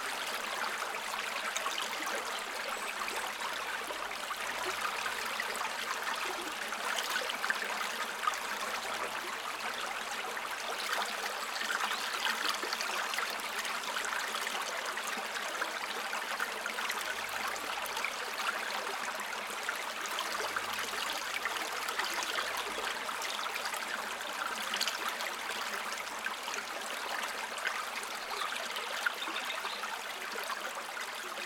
Kriokšlys, Lithuania, river Kriokslis
Small river Krokslis ruuning into Rubikiai lake
Utenos apskritis, Lietuva, 1 May 2022